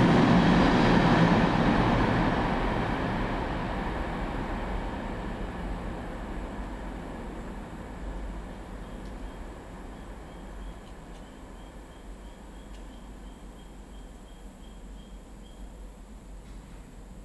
Tunnel, Ballwin, Missouri, USA - 1930 Tunnel
Recording just inside the south entrance of 1930 tunnel as a train passes overhead. Train horn sounds from 0:42-1:06.